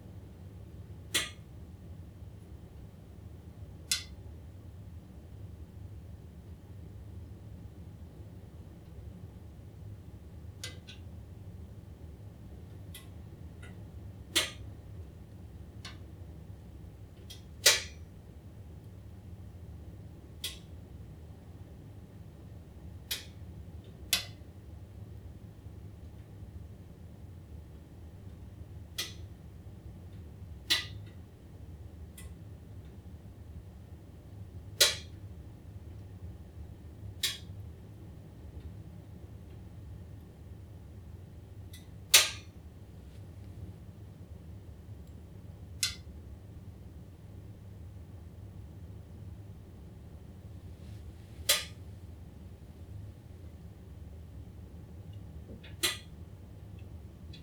cast iron stove cooling down - cooling down
The sound of the fantastic Morso Stove cooling down
Region Nordjylland, Danmark, 29 February 2020